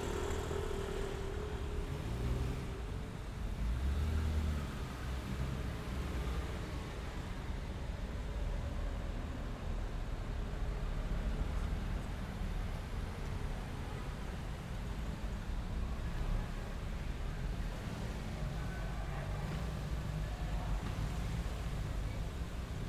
Cl., Medellín, La Candelaria, Medellín, Antioquia, Colombia - Entre las lomas y Palmas
Se escucha el flujo de vehículos en hora pico entre la Loma el Encierro y San Julián que se dirijen hacia la avenida Las Palmas.